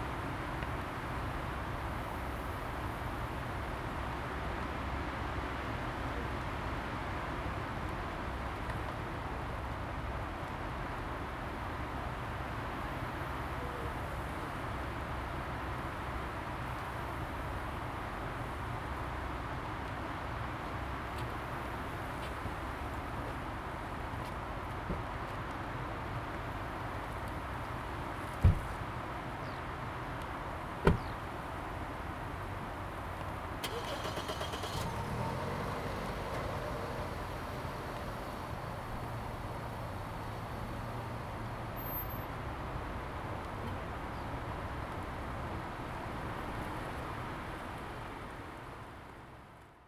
馬山, Jinsha Township - Forest and Wind
Forest and Wind, next to the parking
Zoom H2n MS+XY
金門縣 (Kinmen), 福建省, Mainland - Taiwan Border